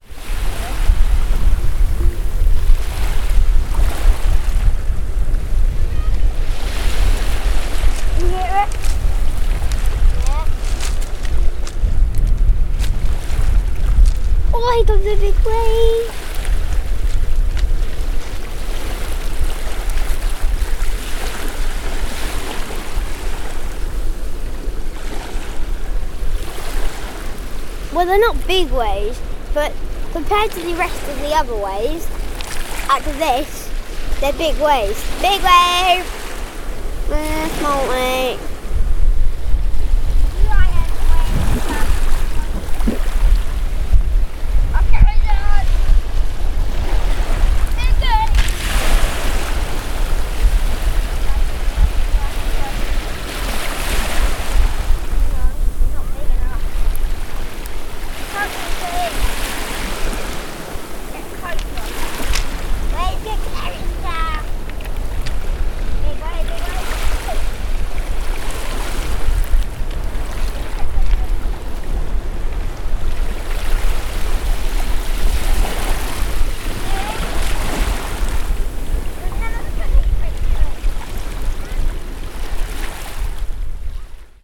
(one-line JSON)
{"title": "Ringstead Bay, Dorchester, Dorset - Sounds of the shore", "date": "2015-08-21 15:15:00", "description": "Children from Dorset Beach School recording and talking about the sounds of the waves landing on the shore at Ringstead Bay.\nDorset Beach School is part of Dorset Forest School.\nSounds in Nature workshop run by Gabrielle Fry. Recorded using an H4N Zoom recorder.", "latitude": "50.63", "longitude": "-2.36", "timezone": "Europe/London"}